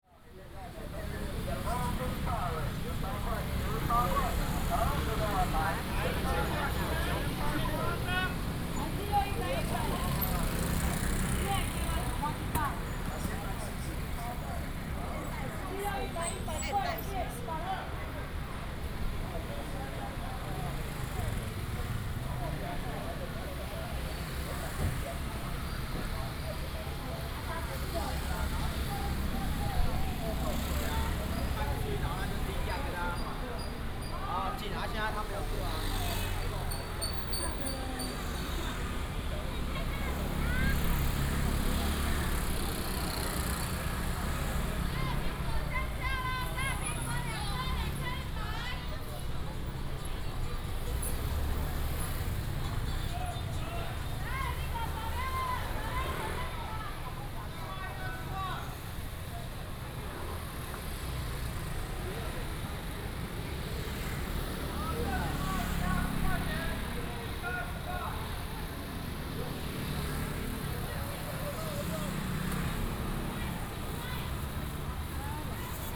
Vegetable market, motorcycle, The sound of vendors
Hsinchu City, Taiwan